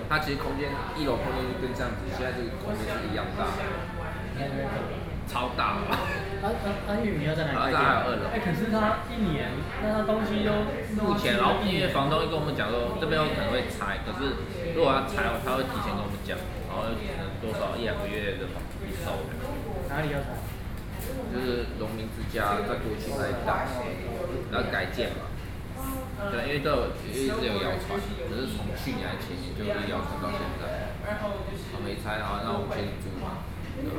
Lane, Section, Luósīfú Rd, Zhongzheng District - in the coffee shop
11 November 2012, 19:34